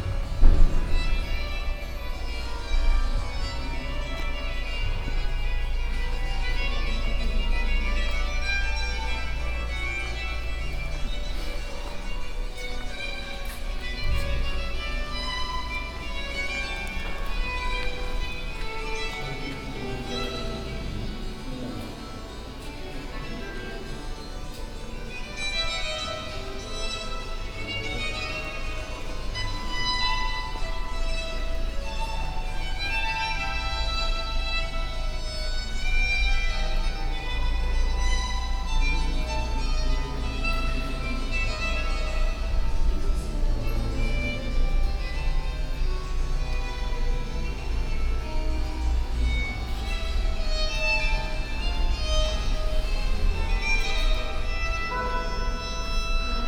l'isle sur la sorgue, church
Inside the Notre-Dame-des-Anges church. The sound of Renaissance music and visitors in the big church hall.
international village scapes - topographic field recordings and social ambiences